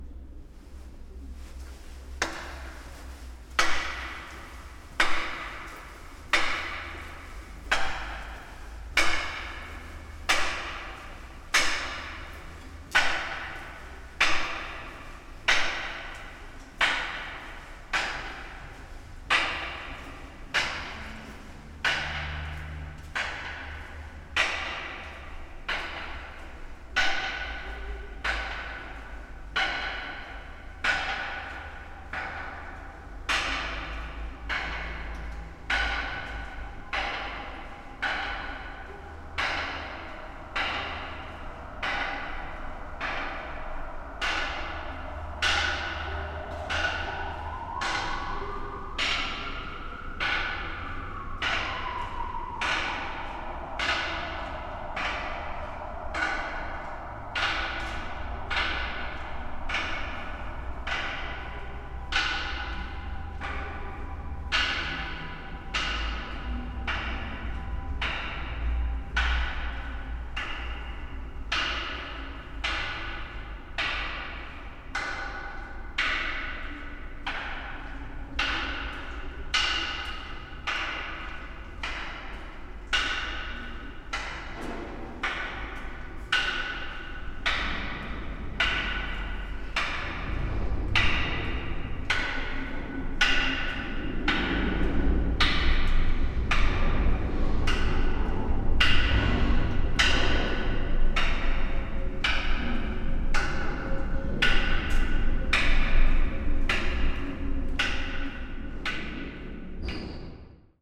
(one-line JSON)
{"title": "Ola checks the echo, Old drain tunnel Łódź, Poland - Ola checks the echo, drain tunnel ?ód?, Poland", "date": "2012-04-05 12:50:00", "description": "Aleksandra trying to define the echo of a oval shaped drain tunnel under the freedom square of Lodz. Made during a sound workshop organzied by the Museum Sztuki of Lodz", "latitude": "51.78", "longitude": "19.45", "altitude": "209", "timezone": "Europe/Warsaw"}